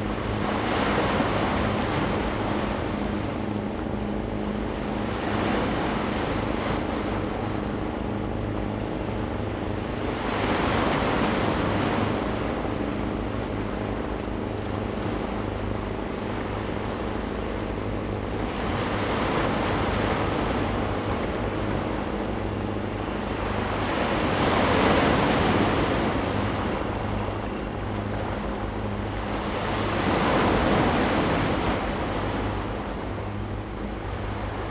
淡水觀海長提, New Taipei City - Wave of sound
Wave of sound
Zoom H2n MS+XY
Tamsui District, New Taipei City, Taiwan